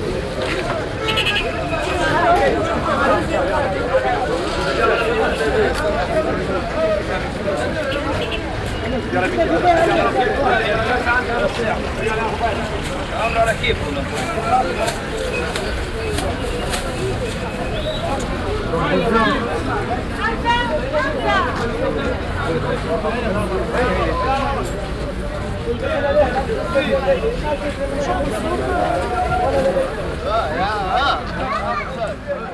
Bab El Jazira, Tunis, Tunesien - tunis, friday market at mosquee sidi el bechir
At the crowded open air friday street market. The sound of a strawberry fruit trader calling out. Overall the sound of people walking around, talking and shopping plus the traffic noise.
international city scapes - social ambiences and topographic field recordings